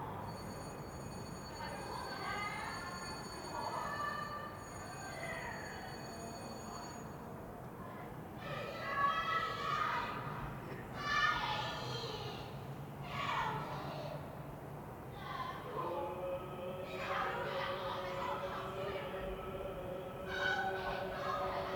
Moabit, Berlin, Germany - Penalty Shootout screams, Champions League final, Bayern Munich v Chelsea
Fans caught up in the atmosphere of the match while watching it on TV a couple of buildings distant. Obviously Bayern supporters, it all goes wrong when Chelsea win (maybe around 4'20" in). Even the soundscape sounds disappointed. Some of the longer gaps between events have been edited, so it's not quite real time.
19 May 2012